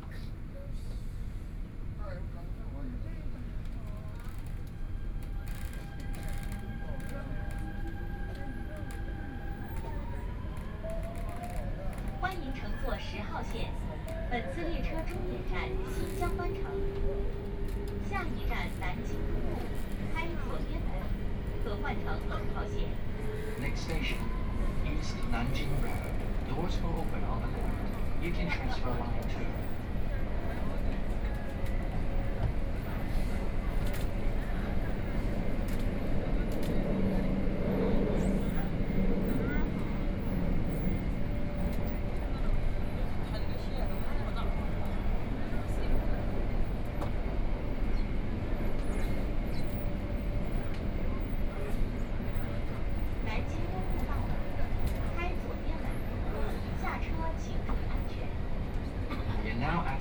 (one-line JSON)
{"title": "Huangpu District, Shanghai - Line 10 (Shanghai Metro)", "date": "2013-11-28 15:54:00", "description": "from Laoximen station to North Sichuan Road station, erhu, Binaural recording, Zoom H6+ Soundman OKM II", "latitude": "31.24", "longitude": "121.48", "altitude": "10", "timezone": "Asia/Shanghai"}